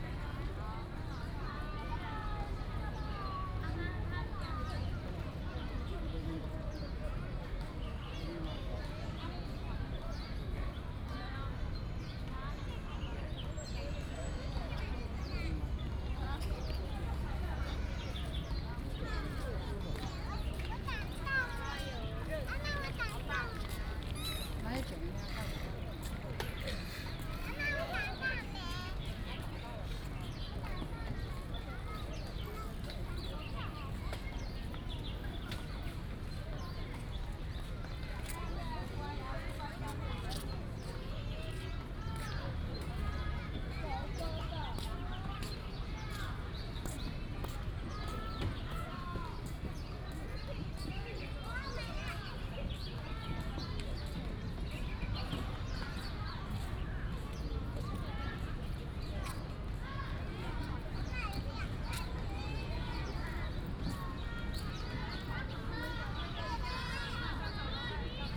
板橋石雕公園, Banqiao Dist., New Taipei City - Children Playground
in the park, Children Playground, Bird calls